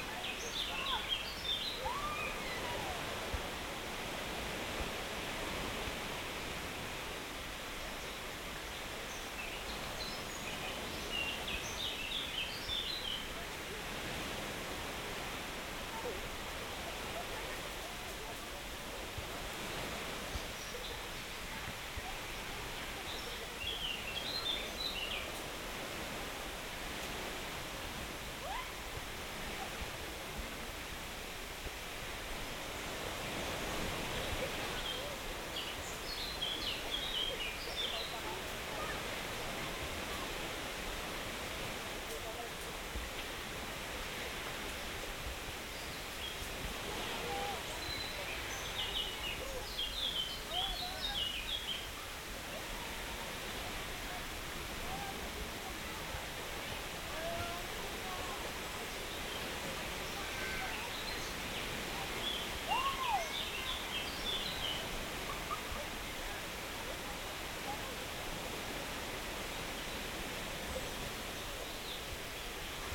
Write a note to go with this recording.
looking to the sea and beach....